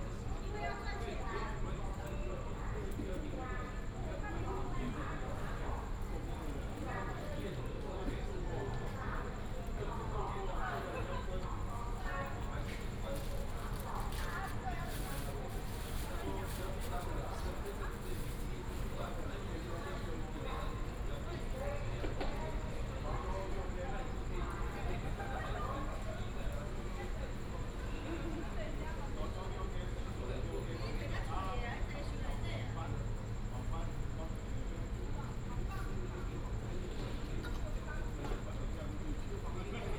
At the train station platform, Train arrives at the station